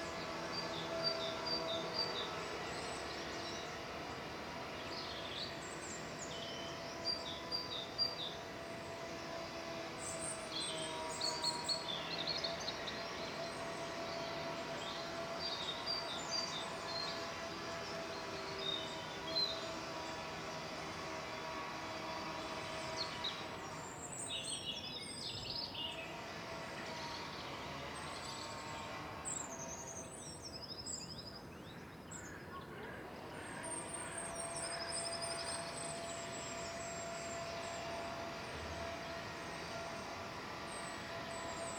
The Drive High Street Moorfield Little Moor Jesmond Dene Road Osborne Road Mitchell Avenue North Jesmond Avenue Newbrough Crescent Osborne Road Reid Park Road
By a 12th century chapel
a place of pilgrimage
in St Mary’s name
A dell below me is overgrown
untended
and a riot of birds
Against the bright sunlight
I see mainly shapes
flying in and out
Two bursts of a woodpecker’s drum
counterpoint
to the bin wagon’s slow thumping approach